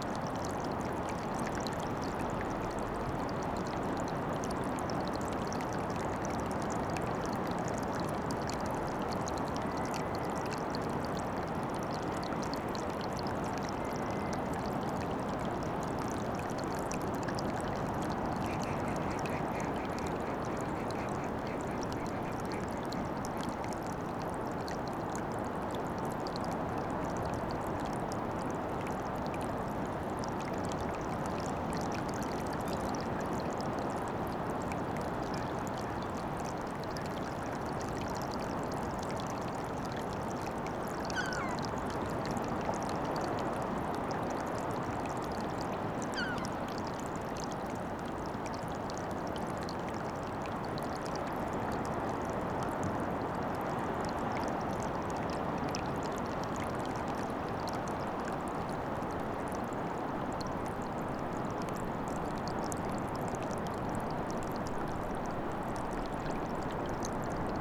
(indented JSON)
{
  "title": "Whitby, UK - waterfall ette ...",
  "date": "2014-06-26 10:25:00",
  "description": "Water running over small ledge into rock pool ... under Whitby East Cliffs ... open lavalier mics on mini tripod ... bird calls from ... herring gull ... fulmar ...",
  "latitude": "54.49",
  "longitude": "-0.61",
  "altitude": "1",
  "timezone": "Europe/Berlin"
}